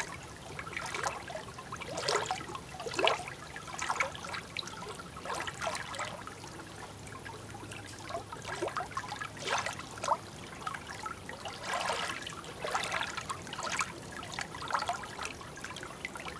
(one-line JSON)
{"title": "Maintenon, France - The Eure river", "date": "2016-07-26 23:05:00", "description": "The Eure river and the small stream, the Guéreau river. Recorded at night as there's very very very much planes in Maintenon. It was extremely hard to record.", "latitude": "48.59", "longitude": "1.58", "altitude": "102", "timezone": "Europe/Paris"}